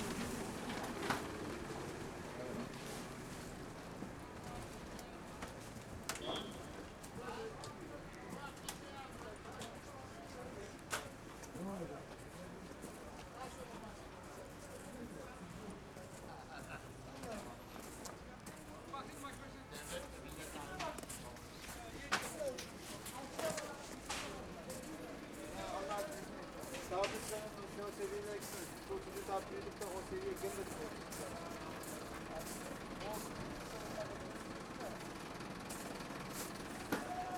berlin, maybachufer: wochenmarkt - the city, the country & me: market day
a walk around the market, cold and snowy winter evening, market is finished, marketeers dismantle their market stalls
the city, the country & me: december 17, 2010
Berlin, Germany, 17 December 2010